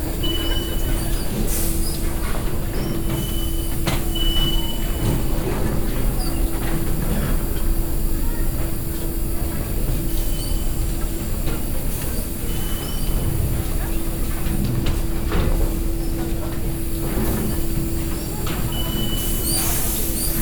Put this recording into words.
ticket passage with pneumatic doors at the exit of the subway station - people passing by - an safety anouncement, international city scapes - social ambiences and topographic field recordings